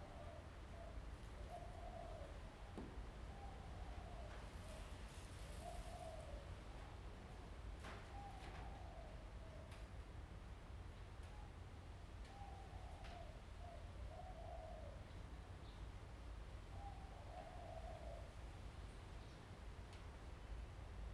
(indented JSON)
{
  "title": "劉澳鶯山宮, Jinsha Township - Birds singing",
  "date": "2014-11-03 11:18:00",
  "description": "Birds singing, next to the temple\nZoom H2n MS+XY",
  "latitude": "24.49",
  "longitude": "118.39",
  "altitude": "6",
  "timezone": "Asia/Taipei"
}